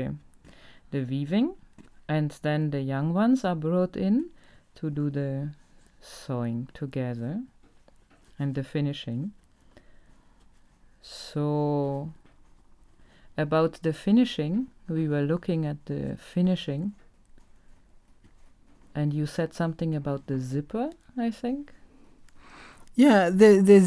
Harmony farm, Choma, Zambia - Talking with Esnart about Ilala crafts
I had just come to Zambia for a couple of days, and at the morning of the interview recording, was about to cross the border back to Binga Zimbabwe. I had brought along as a little gift for Esnart, one of the ilala bags by Zubo women; and this is one of the subjects discussed here in conversation. We are comparing the bag produced by Zubo with some other ilala bags, we happen to have at hand. The interview is thus in parts particularly addressed to the Zubo women, as Esnart’s feedback, knowledge sharing and solidarity message to the women in the Zambezi valley.
Southern Province, Zambia, 12 July 2016